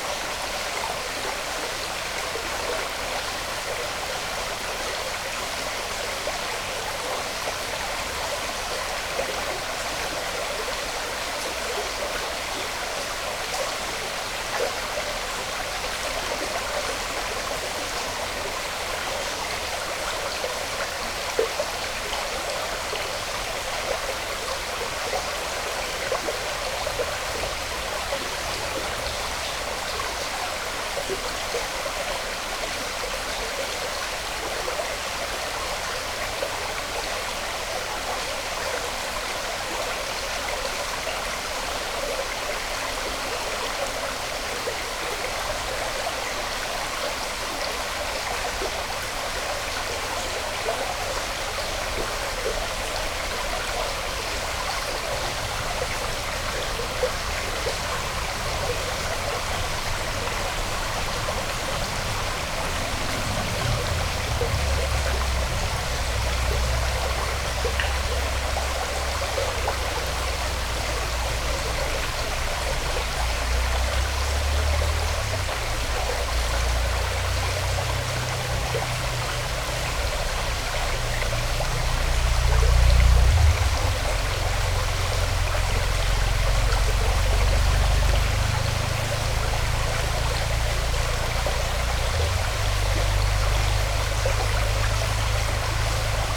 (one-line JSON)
{"title": "Gaberje, Štanjel, Slovenia - Stream Branica", "date": "2020-08-15 08:51:00", "description": "Near Gaberje - Under stone birdge on stream Branica. Lom Uši Pro, Mix Pre3 II", "latitude": "45.83", "longitude": "13.87", "altitude": "151", "timezone": "Europe/Ljubljana"}